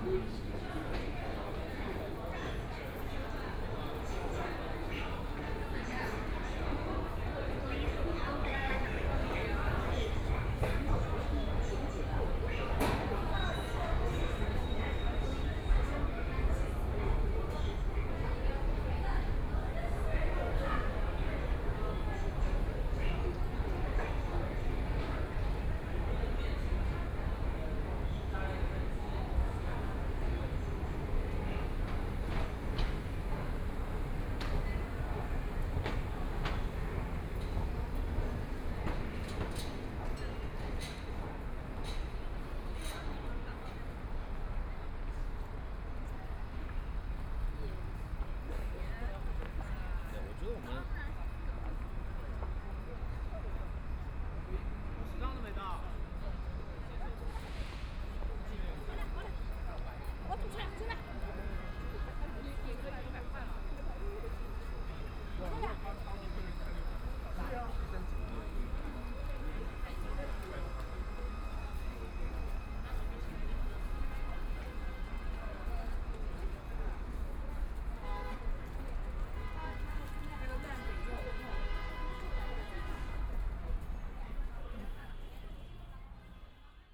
South Xizang Road Station, Shanghai - walk out of the Station
Walking through the lobby out of the station platform station exit, The crowd gathered at the station exit and voice chat, Binaural recording, Zoom H6+ Soundman OKM II